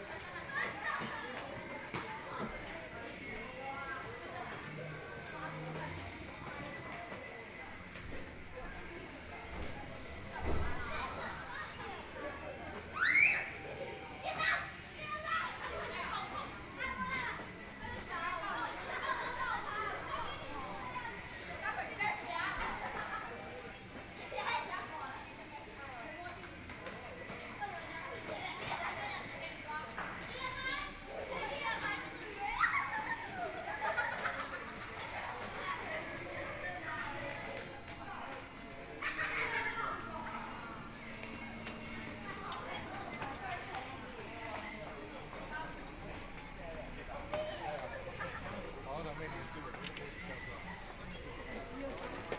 The plaza in front of the temple, Very many children are playing games, Zoom H4n+ Soundman OKM II
Shuilin Township, 雲151鄉道